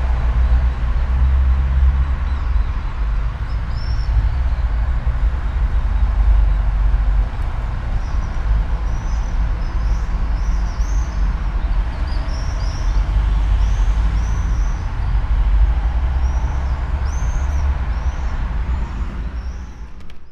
all the mornings of the ... - jun 20 2013 thursday 07:33